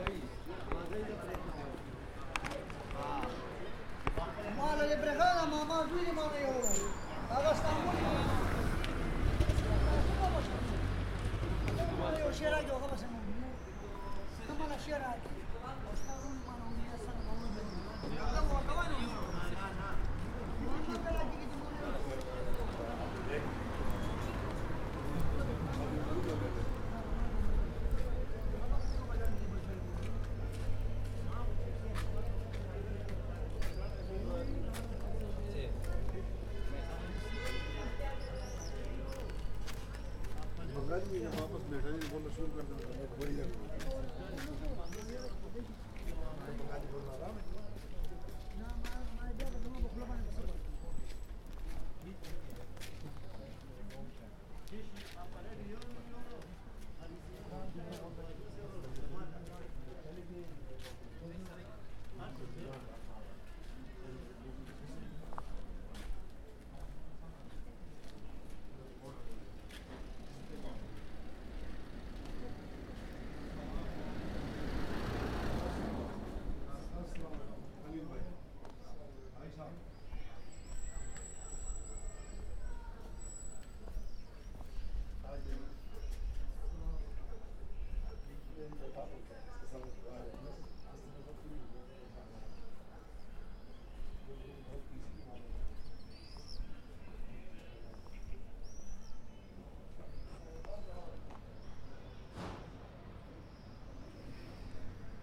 Via Posidonio, Roma RM, Italia - acqua fontana
acqua che sgorga dalla fontana